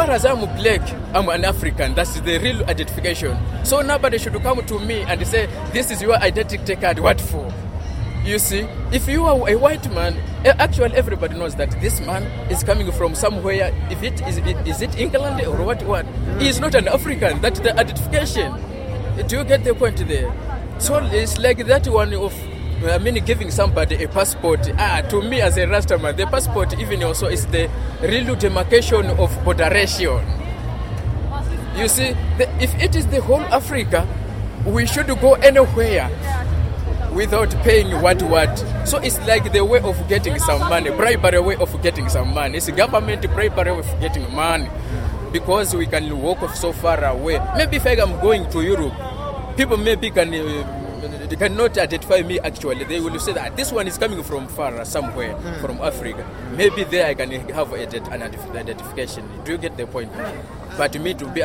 Hillbrow, Johannesburg, South Africa - hawkers
A recording of Ras, a hawker who makes a living selling sweets, cigarets & clothing of the streets. He's from Malawi.